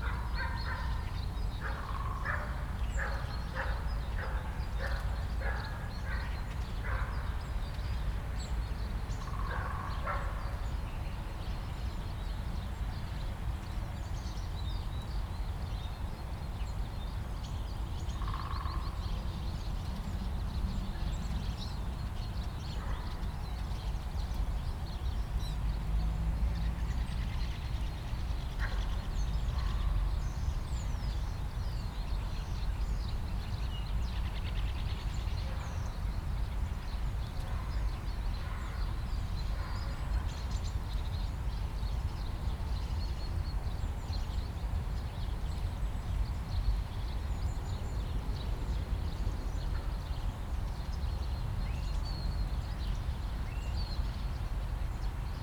Panketal, Berlin - morning ambience
Panketal, river Panke (inaudible), morning ambience, distant traffic hum (6dB filter at 80Hz)
(Sony PCM D50, DPA4060)